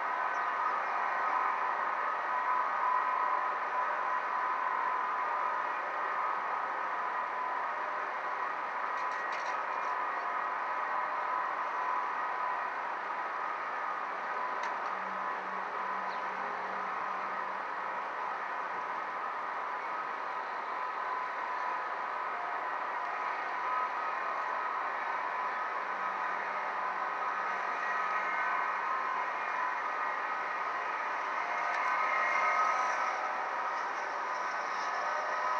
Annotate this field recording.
a metal grid within a concrete gate divides one section of the parking lot from the other. it vibrates with the wind and captures surrounding sounds. recorded with contact microphones. all recordings on this spot were made within a few square meters' radius.